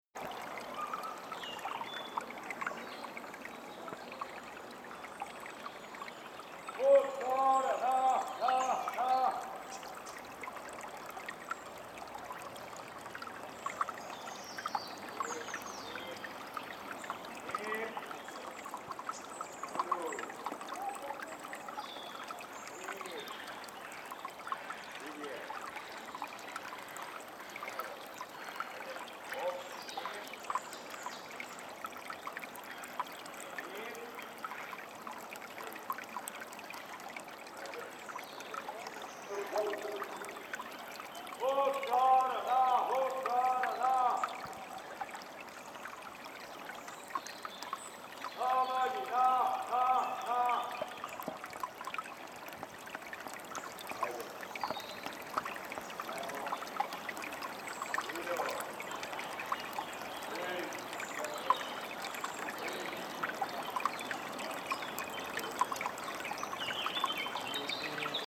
Rjecina, Zakalj, mulino
Goatsheperd living in canyon of river rjecina near old mill zakalj